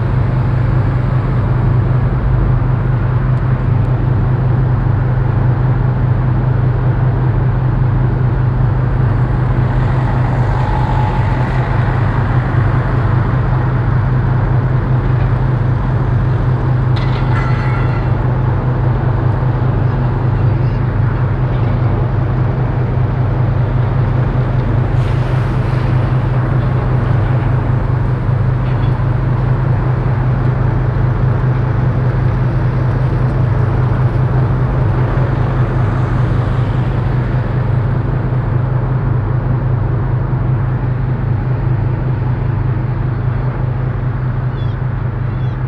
In the harbour of Skudeneshavn at a rock full with breeding seagulls on a windy summer day. The permanent sound of a ship motor nearby.
international sound scapes - topographic field recordings and social ambiences